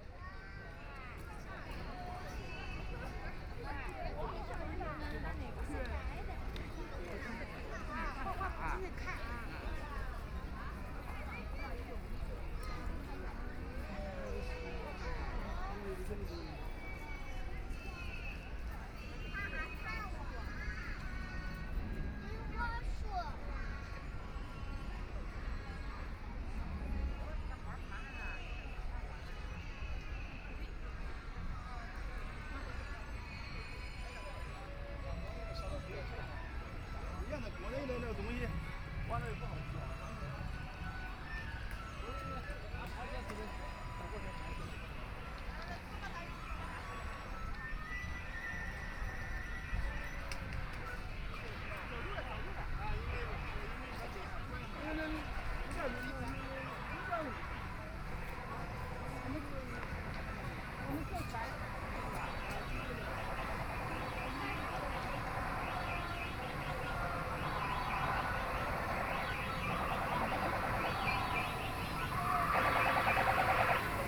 Heping Park, 虹口區 - soundwalk
Walking to and from the crowd, Many sound play area facilities, Train rides, Binaural recording, Zoom H6+ Soundman OKM II
Shanghai, China, 23 November 2013